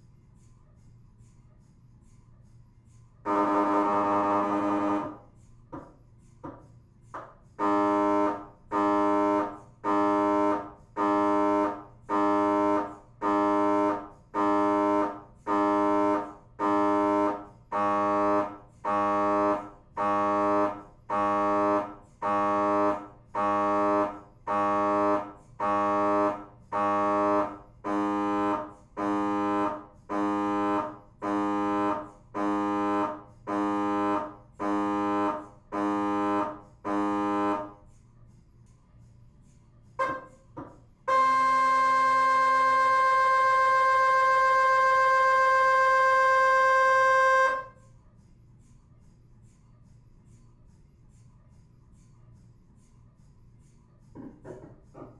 MRI room, Kangwon University Hospital - MRI scan
Neuro science research is carried out to look into how neuro-plasticity may help people with Tinnitus or other hearing loss.